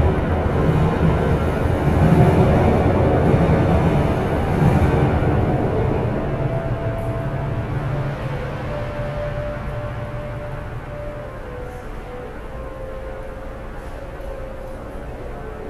Alexanderplatz, Tunnel under s-bahn track - Tunnel under s-bahn track
(Pipes, someone playing something, binaurals)
2 February, 13:07